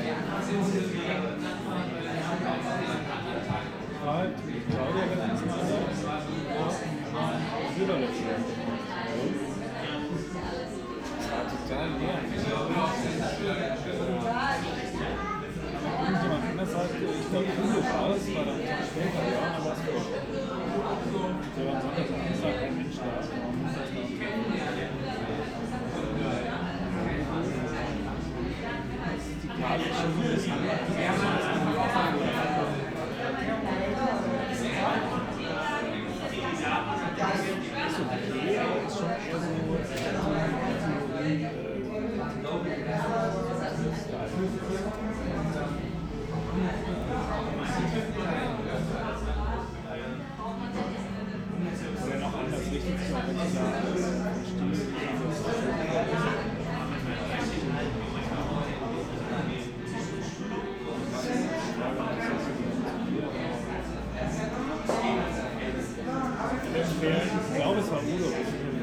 berlin, friedelstraße: kulturverein kinski - the city, the country & me: kinski club
the city, the country & me: june 3, 2010
Berlin, Deutschland, 3 June, 23:25